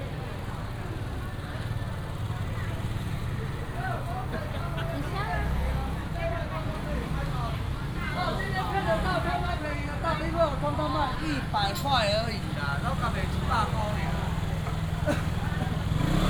Walking in the traditional market
Sanchong District, New Taipei City, Taiwan, 23 April, ~10:00